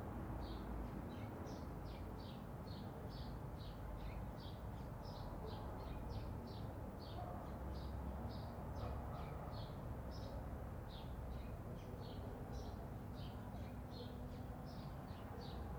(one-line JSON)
{"title": "Calle, Mercedes, Buenos Aires, Argentina - Casa", "date": "2018-06-16 15:00:00", "description": "En el patio de la casa donde viví mi infancia.", "latitude": "-34.64", "longitude": "-59.43", "altitude": "38", "timezone": "America/Argentina/Buenos_Aires"}